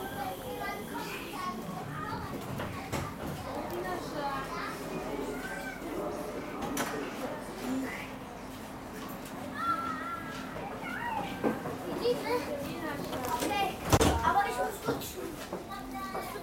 Köln, tram - Köln, subway
Subway ride from station Hans-Böckler-Platz to station Venloer Str./Gürtel
Tram ride from station Eifelstrasse to station Rudolfplatz. Tram changes to subway after 2 station.
Recorded july 4th, 2008.
project: "hasenbrot - a private sound diary"